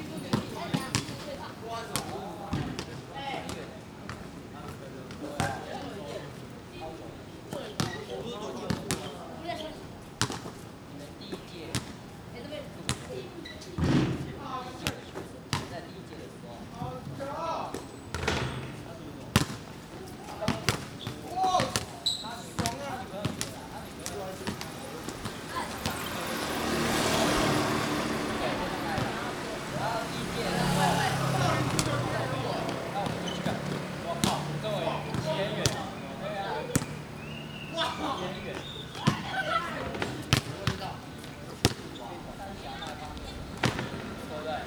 Dianxin St., Sanchong Dist., New Taipei City - Next to the basketball court
Next to the basketball court, Birds singing, Traffic Sound
Sony Hi-MD MZ-RH1 +Sony ECM-MS907